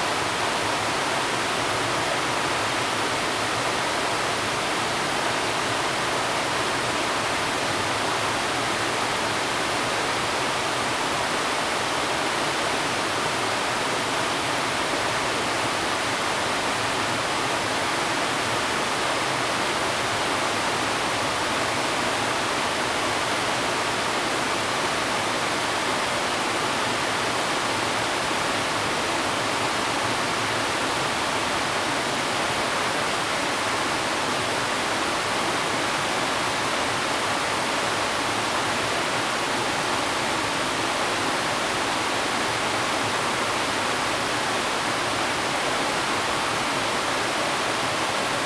得子口溪, Jiaoxi Township, Yilan County - Waterfalls and stream
Waterfalls and stream
Zoom H2n MS+ XY